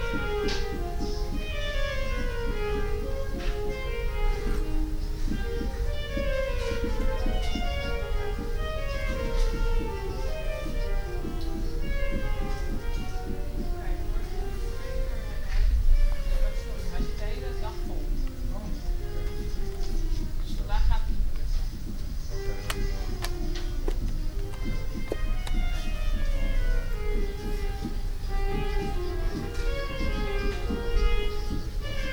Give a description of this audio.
Recording of a girl practicing the saxophone in the special sound booth of the public library. You can also hear another girl wearing headphones hammering the keys of a electronic piano. Binaural recording.